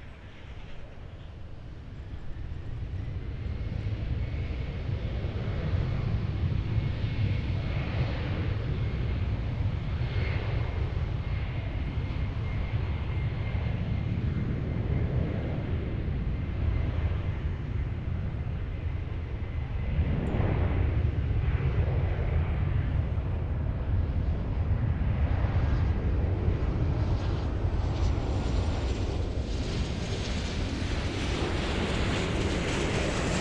MSP Dog Park - MSP Dog Park 2022--7-10 18 1827
The sounds of the dog park next to Minneapolis/Paul International Airport. This is a great spot to watch planes when aircraft are landing on runway 12R. In this recording aircraft can be heard landing and taking off on Runway 12R and 12L and taking off on Runway 17. Some people and dogs can also be heard going by on the path.